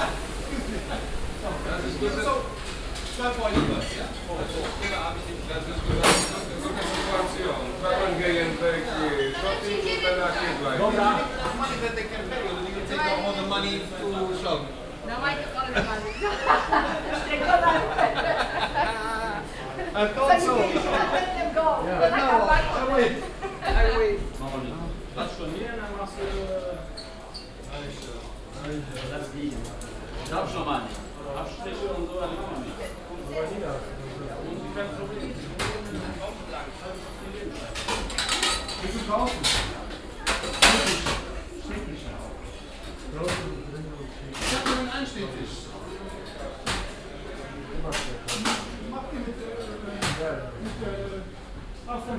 cologne, am hof, brauhaus früh
eingangsbereich und gang durch das brauhaus, mittags. internationale stimmen, spülanlage, ausschank, gläserklirren, köbessprüche
soundmap nrw: social ambiences/ listen to the people - in & outdoor nearfield recordings, listen to the people
July 2008